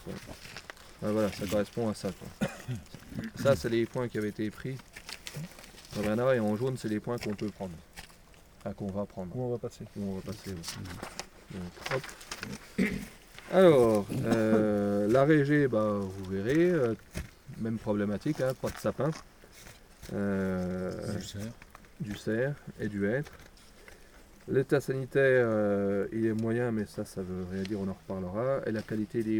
Préparation au martelage ONF - Réserve Naturelle du Massif du Ventron, France
Consigne de l'ONF avant martelage des parcelles 46 & 47 de la réserve naturelle du grand ventron.
Cornimont, France, 25 October 2012, 08:02